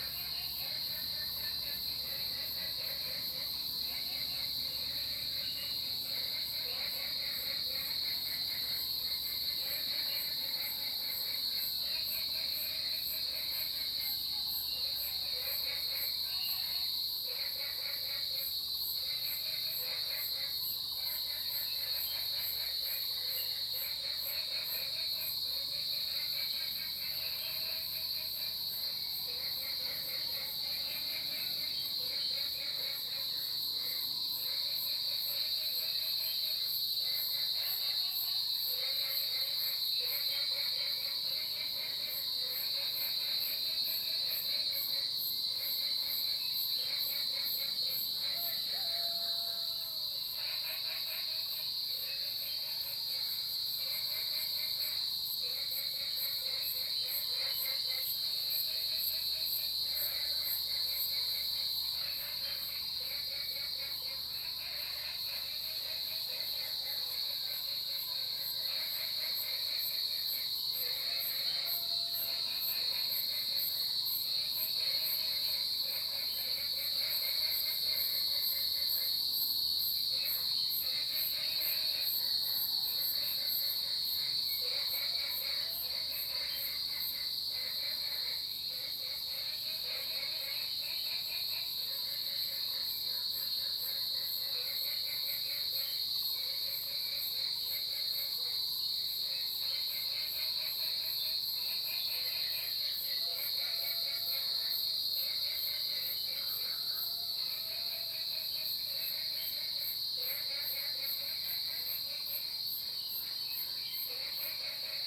綠屋民宿, 桃米里Puli Township - Early morning
Early morning, Cicada sounds, Frogs chirping, Bird call
Zoom H2n MS+XY
Puli Township, Nantou County, Taiwan, 10 June 2015